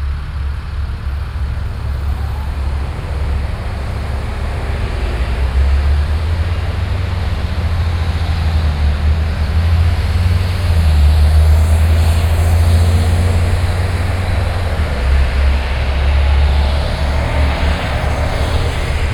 USA, Texas, Austin, Crossroad, Road traffic, Binaural